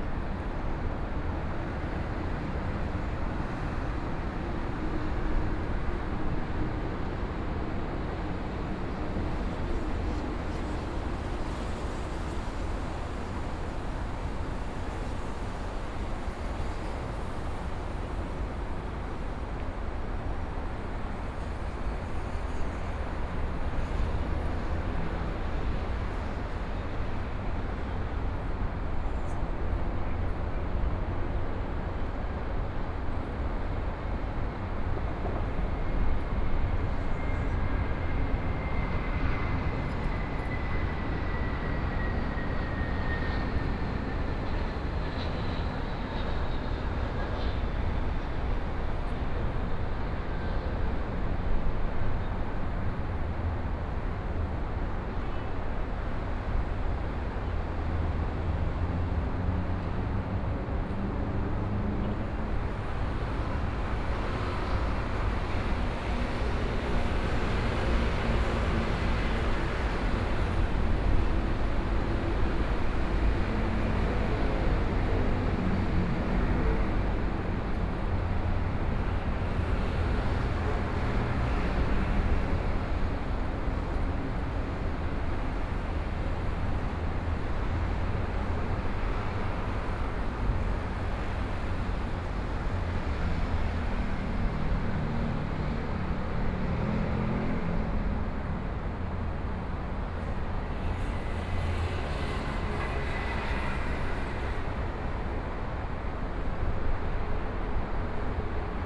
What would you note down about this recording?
Sound from highway, Environmental sounds, Traffic Sound, Please turn up the volume a little, Binaural recordings, Sony PCM D100 + Soundman OKM II